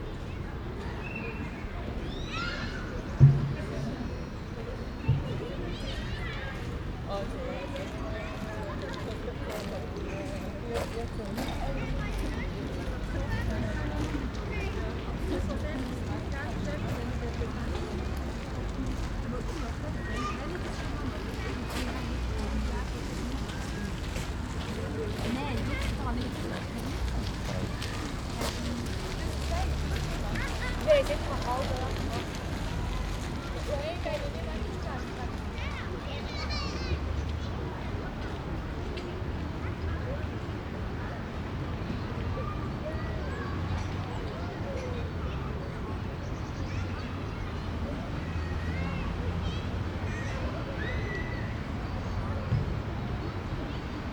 Rosengarten, Schosshalde, Bern, Schweiz - Rosengarten Park
Sunny winter day. Lot of people out in the parc, children playing to the left. Street traffic in the back.
Microphones: MKH50/MKH30 in MS-stereo configuration in Rode Blimp
Recorder: zoom F8
Bern, Switzerland